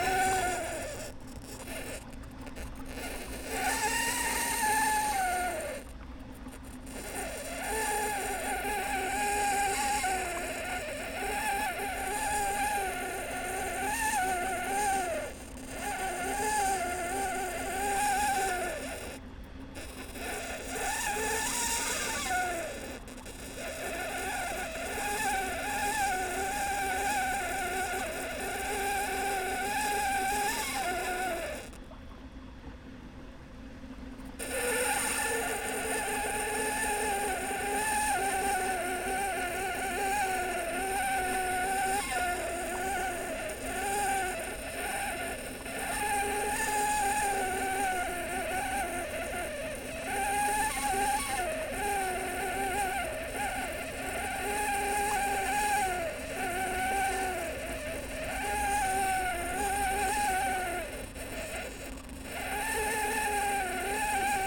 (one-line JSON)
{"title": "All. Jules Guesde, Toulouse, France - Turbine qui couine", "date": "2022-09-05 10:33:00", "description": "Quand ça coince, ça couine. Voici le son d'une turbine de fontaine obstruer par des feuilles. Un son cocasse !\nEnregistré avec:\nNeumann KM184 ORTF\nZoomF6", "latitude": "43.59", "longitude": "1.45", "altitude": "149", "timezone": "Europe/Paris"}